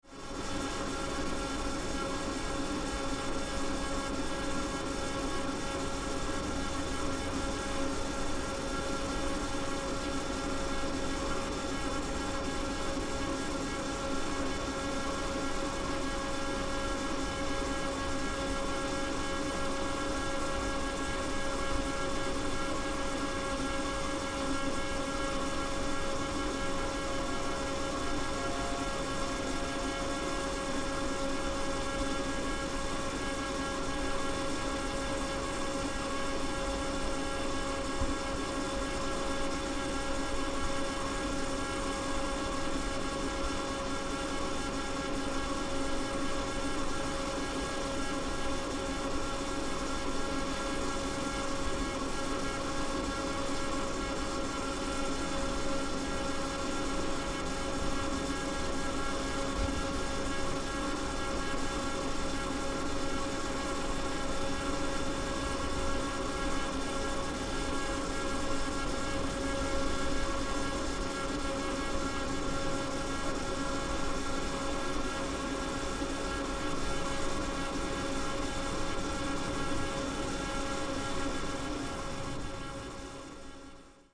Březno, Czech Republic - Nástup mine - Hum of a shut down excavator
Although this excavator was not operating it still hums in readiness. when working this machine is removing the top soil to expose the coal seam many meters below the surface.
23 August 2012, 11:08